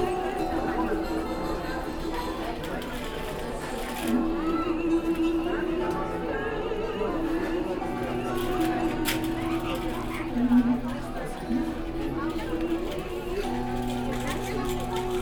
Bismarckstraße, Hamm, Germany - Ukrainian song at Refubeats 2022
After a break of two years due to the pandemic, the big festival of the refugee aid Hamm takes place again. People from many different countries who have found and created their new home in the city are the hosts and artistic performers at this festival.
Nach zwei Jahren pandemiebedingter Pause findet das grosse interkulturelle Fest der Flüchtlingshilfe Hamm wieder statt. Menschen aus vielen verschiedenen Ländern, die in der Stadt ihren neuen Lebensmittelpunkt gefunden und geschaffen haben, sind bei diesem Fest die Gastgeber*innen und künstlerischen Darbieter*innen.
Nordrhein-Westfalen, Deutschland, 18 June